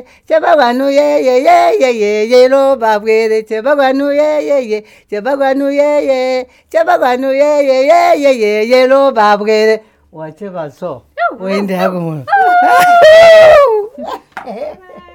Luyando talks to her aunt Janet about female initiation rights in front of related artifacts at the BaTonga Museum in Binga... Janet responds with a song... (in ChiTonga with summary translations)